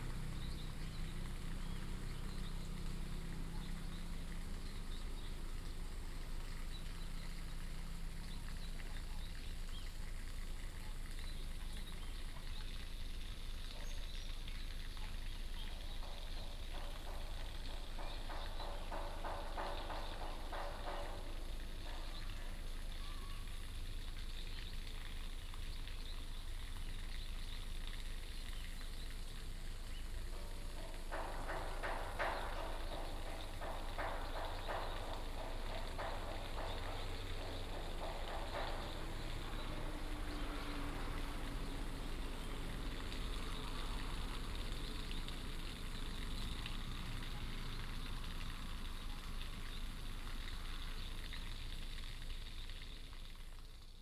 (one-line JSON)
{"title": "金山里6鄰, Guanxi Township - In the old bridge", "date": "2017-08-14 16:43:00", "description": "In the old bridge, birds, Construction beating, river, Binaural recordings, Sony PCM D100+ Soundman OKM II", "latitude": "24.77", "longitude": "121.22", "altitude": "215", "timezone": "Asia/Taipei"}